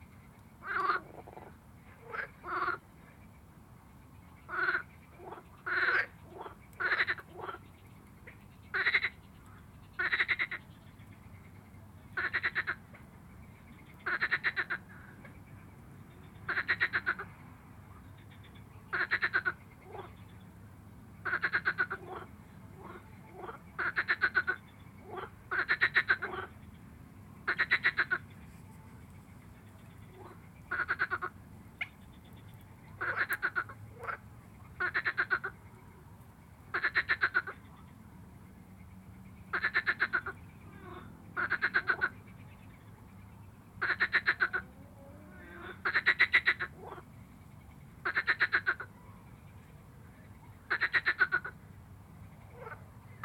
Higham Marshes, Gravesend, UK - Marsh Frogs Close Up
"Laughing" marsh frogs in breeding season, Higham Marshes, Kent, UK
South East England, England, United Kingdom, 5 June 2021, 22:00